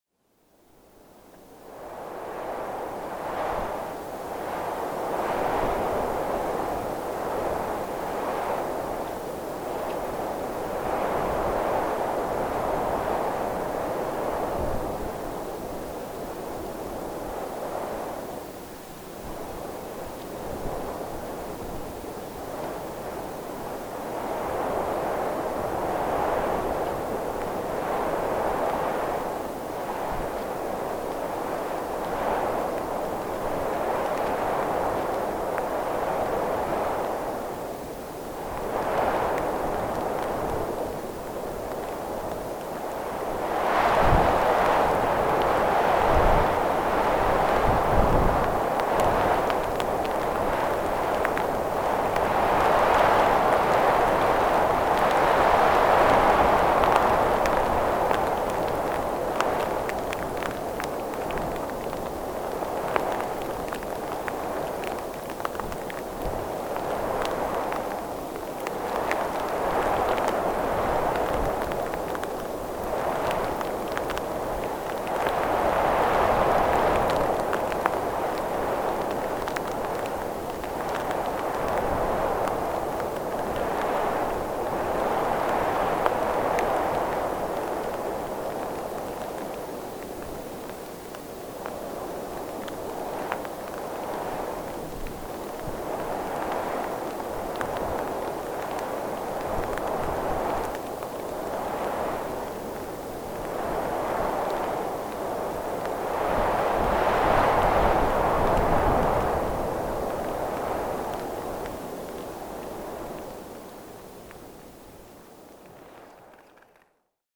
Hures-la-Parade, France - Wintry wind
A wintry wind in the firs. In the denuded lands, this morning is quite hostile.